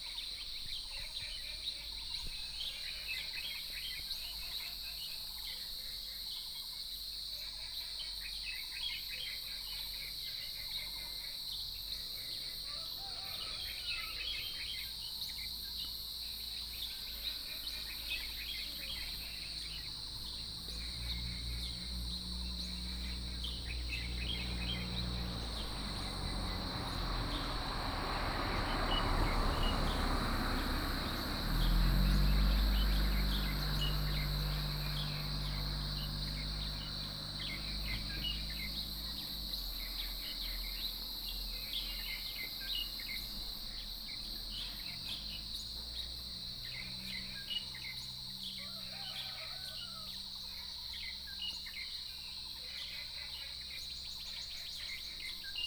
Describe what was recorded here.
Birdsong, Chicken sounds, Frogs chirping, Early morning